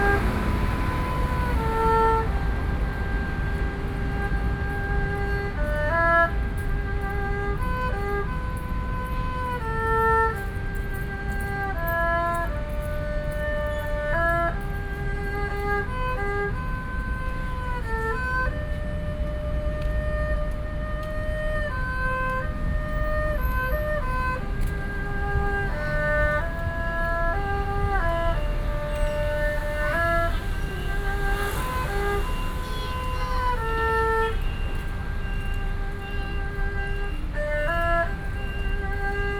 Taipei, Taiwan - Next to the bus stop
Next to the bus stop, Played traditional musical instruments in the streets
Songshan District, 台北學苑, 29 October 2012